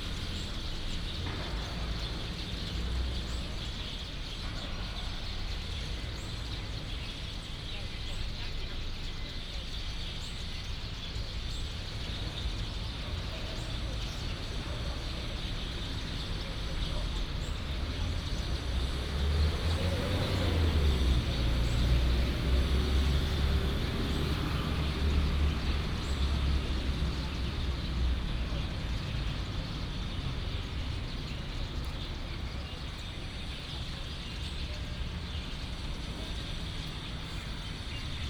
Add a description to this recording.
Traffic sound, in the park, Construction sound, Many sparrows